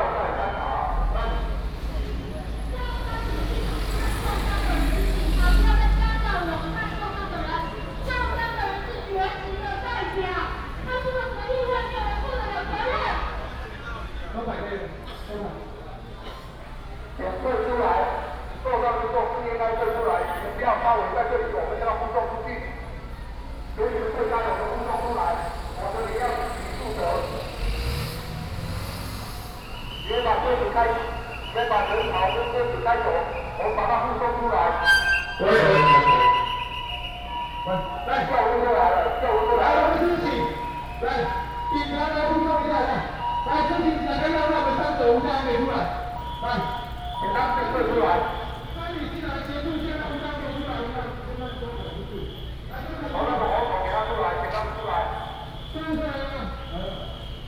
Qingdao E. Rd., Taipei City - Labor protest
Labor protest, Traffic sound
2 December 2016, 12:32